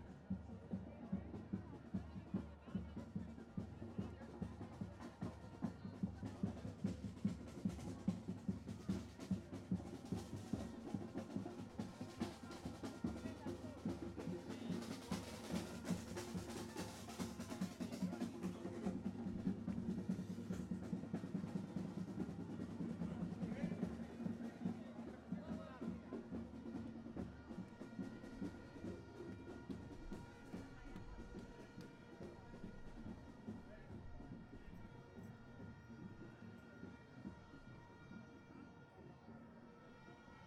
Israeli Prime Minister Residence, Jerusalem - Crime Minister Protest
Tens of thousands of demonstrators gathered for a final protest, 3 days before the election, in front of the official residence of the corrupt Israeli Prime Minister, Netanyahu. A demonstration that marks 9 consecutive months of popular protest across the country that led to the overthrow of the government. The demonstrators are demanding a change of government, the preservation of democracy and the prosecution of Netanyahu for bribery, fraud and breach of trust.
מחוז ירושלים, ישראל